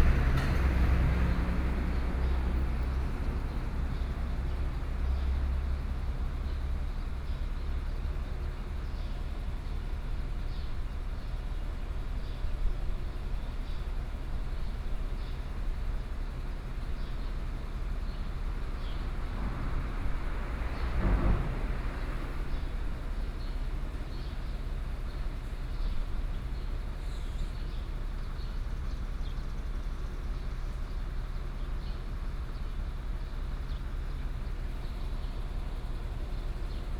Dongcheng Rd., Dongshan Township - Under the railway track
Under the railway track, Traffic Sound, Birdsong sound, Trains traveling through, Hot weather
28 July, ~13:00